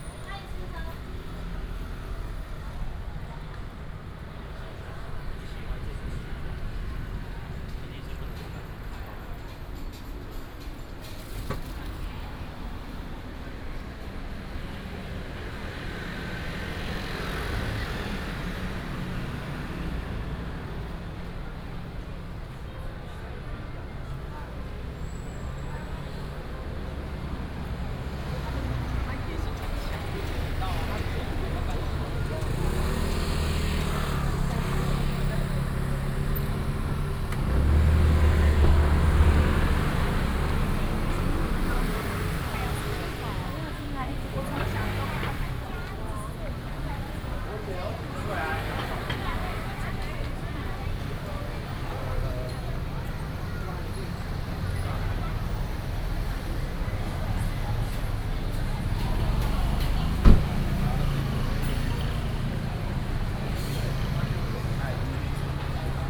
walking in the Street, Traffic noise, Various shops